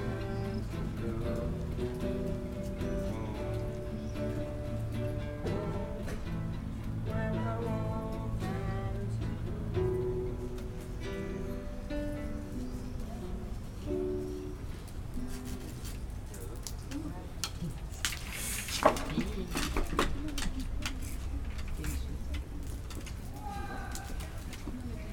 Köln, Maastrichter Str., backyard balcony - summer evening ambience
neigbour's practising guitar, people on the balconies, swifts
(Sony PCM D50, DPA4060)